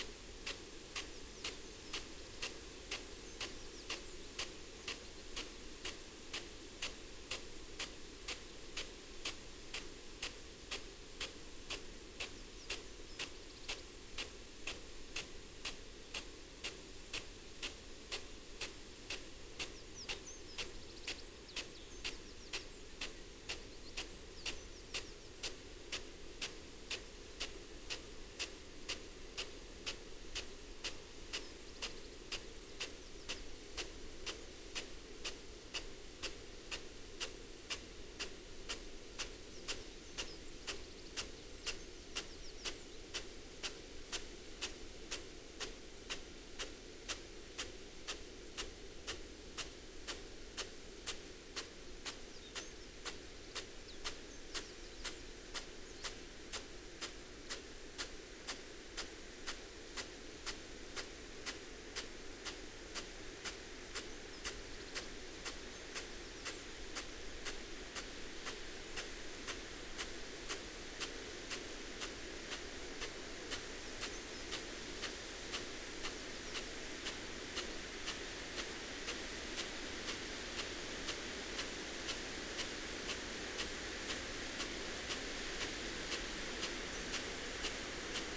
Malton, UK - irrigation sprinkler ...
irrigation sprinkler on potato crop ... dpa 4060s in parabolic to mixpre3 ... bird calls ... song ... from ... wren ... yellowhammer ... blackbird ... linnet ... corn bunting ... tings and bangs from the big cylinder of rolled water pipe ... just fascinated by these machines and the effects they produce ...
England, United Kingdom, 15 July 2022